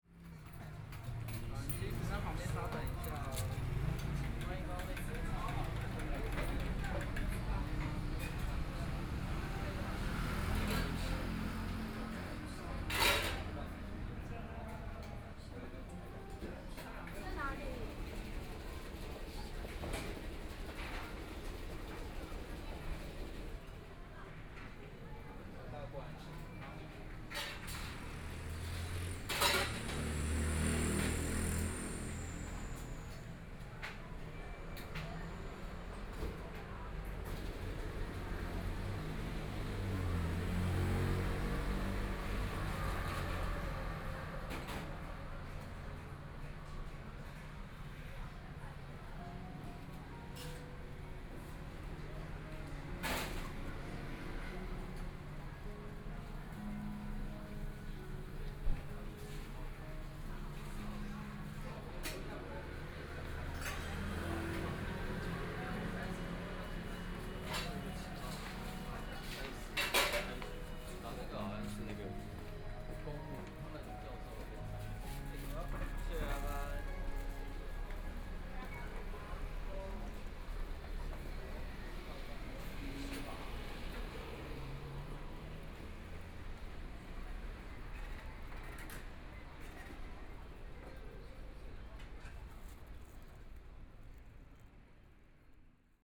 Dazhi Road, Taipei City - Drink Shops
Drink Shops
Binaural recordings
Zoom H4n+ Soundman OKM II
16 February 2014, 18:31